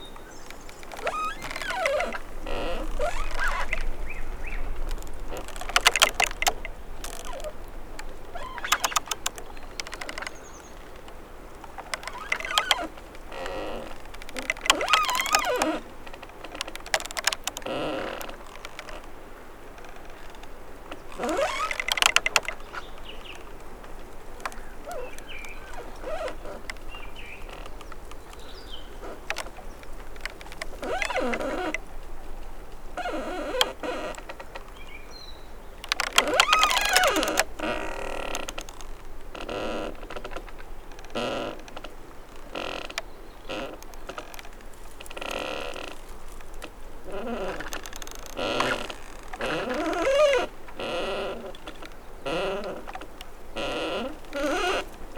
Huminakuja, Oulu, Finland - Damaged tree swaying in the wind
A damaged tree swaying in the wind next to the park at Meri-Toppila. Recorded with Zoom H5 with the default X/Y capsule. Wind rumble removed in post.
Pohjois-Pohjanmaa, Manner-Suomi, Suomi, 15 May 2020